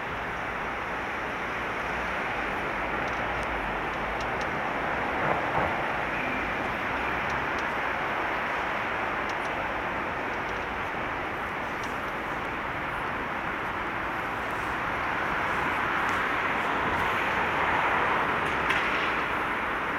Berlaymont. Rue de la Loi, Bruxelles, Belgium - Berlaymont Ambience
Background sounds of traffic reflected in the Berlaymont building. Binaural recording